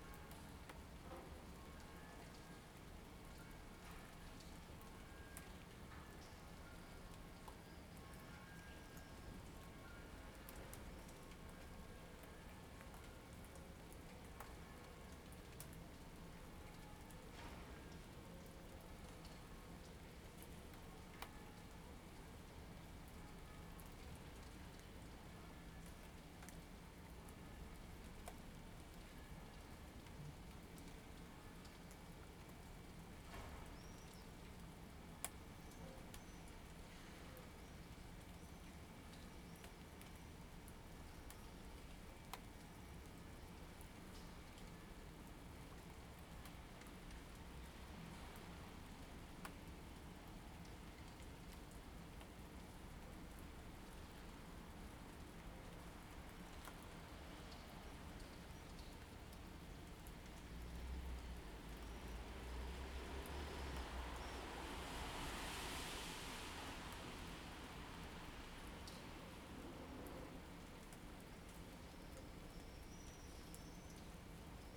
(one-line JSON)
{"title": "Ascolto il tuo cuore, città, I listen to your heart, city. Several chapters **SCROLL DOWN FOR ALL RECORDINGS** - Rainy Sunday with swallows in the time of COVID19 Soundscape", "date": "2020-04-19 17:15:00", "description": "\"Rainy Sunday with swallows in the time of COVID19\" Soundscape\nChapter L of Ascolto il tuo cuore, città, I listen to your heart, city.\nSunday April 19th 2020. Fixed position on an internal terrace at San Salvario district Turin, fifty days after emergency disposition due to the epidemic of COVID19.\nStart at 5:15 p.m. end at 6:15 p.m. duration of recording 01:00:00.", "latitude": "45.06", "longitude": "7.69", "altitude": "245", "timezone": "Europe/Rome"}